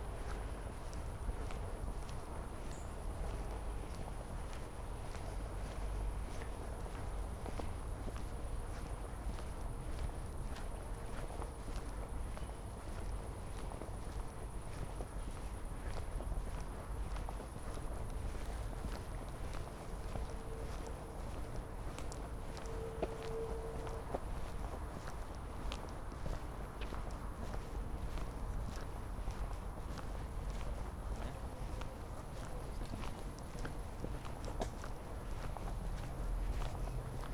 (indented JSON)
{
  "title": "Berlin Buch, Pankeplatz - walking direction S-Bahn station",
  "date": "2021-09-05 19:50:00",
  "description": "Berlin Buch, Pankeplatz, bridge over almost silent river Panke, walking direction S-Bahn station on a Sunday evening in early September\n(Sony PCM D50, Primo EM272)",
  "latitude": "52.63",
  "longitude": "13.49",
  "altitude": "52",
  "timezone": "Europe/Berlin"
}